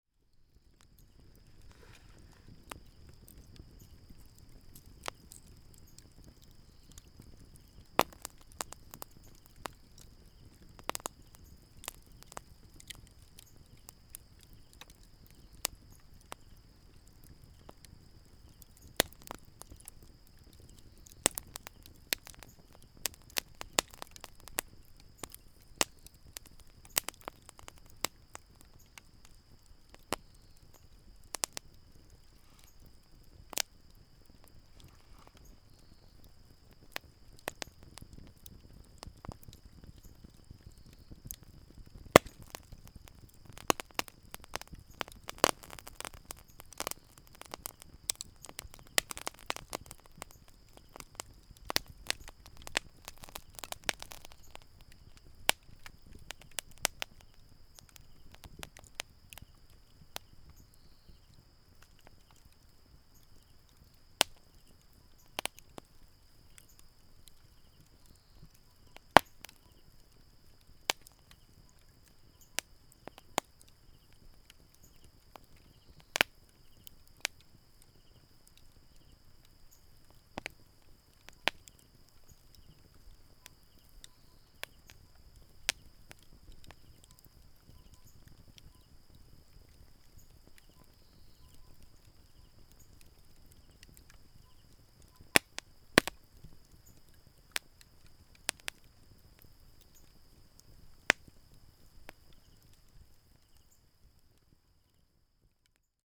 fire, Wood and Rock, Bird song, Insect noise
Zoom H6 XY